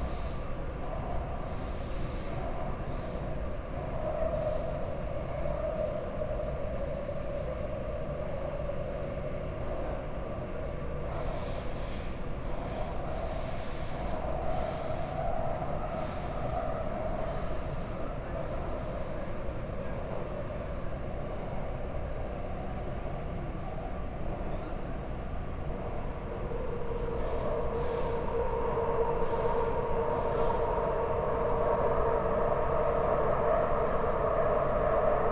Taipei, Taiwan - Orange Line (Taipei Metro)
from Guting Station to Songjiang Nanjing station, Binaural recordings, Zoom H4n+ Soundman OKM II